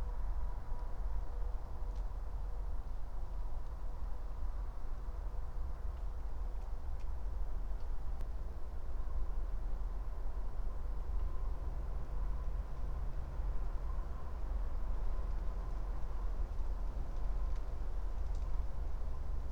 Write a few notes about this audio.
(remote microphone: AOM5024/ IQAudio/ RasPi Zero/ LTE modem)